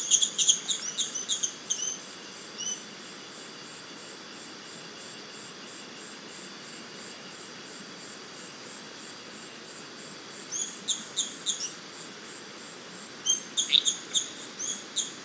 Iracambi - the pond
recorded at Iracambi, a NGO dedicated to protect and grow the Atlantic Forest